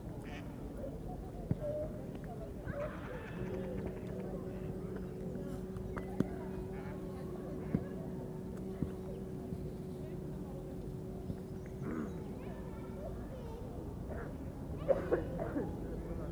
Palace Park, Am Schloßpark, Berlin, Germany - 3 days of lockdown; park in bright sun, slightly less cold
Slightly less cold but not so much different from the day before. More adults exercising or walking with a friend. No planes and still few birds singing, except wood pigeons and a nuthatch. One women 'omms' softly while during her slow yoga movements. 50 meters away 3 teenage girls sit together on a bench. I watch as a police car stops and 3 officers walk across the grass to speak to them. Identity cards are checked. Verbal authority is applied and one of the girls gets up to move to a more distant seat. It's first time I've seen the coronavirus rules being enforced. Noticeable that when the police return to their car they do not keep 1.5m from each other!
Deutschland, 24 March 2020